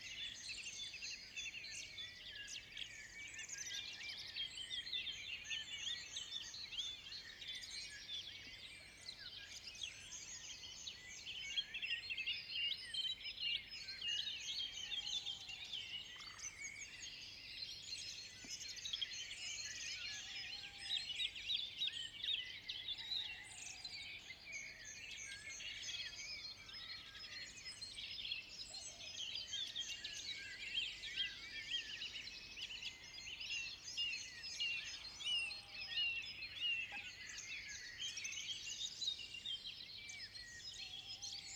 5H46 EN BORD DE SÈVRE, réveil merveilleux dans les Marais du Poitou. Les acteurs naturels sont Hyperactifs entre 2 averses en ce printemps souvent pluvieux!